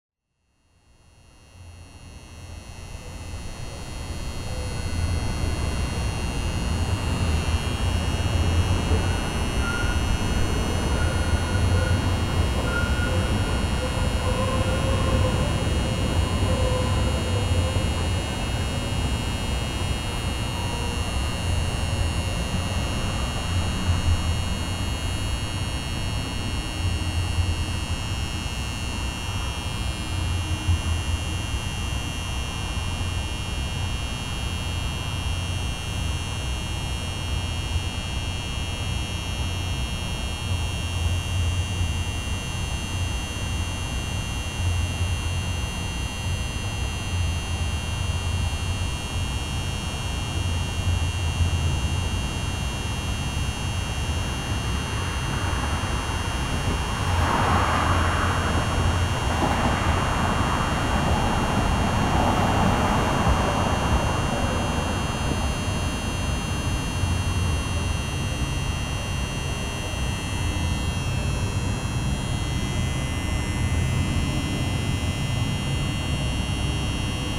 Mid-Town Belvedere, Baltimore, MD, USA - Lamp Post Buzzing
The sound of a buzzing lamp post, as well as traffic and a helicopter flying overhead. Recorded with the onboard Zoom H4n Microphones